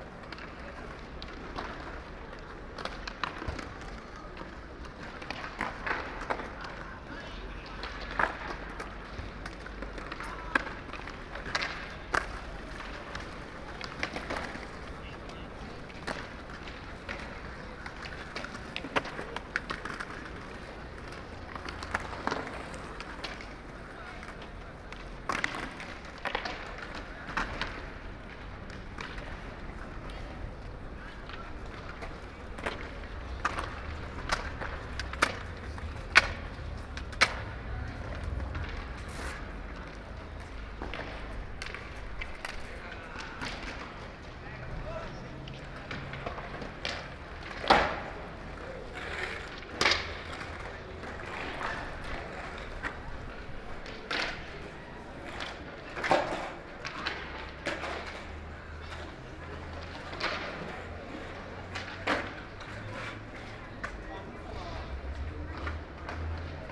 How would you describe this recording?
Skaters on the plaza by Macba Museum, Binaural recording, DPA mics.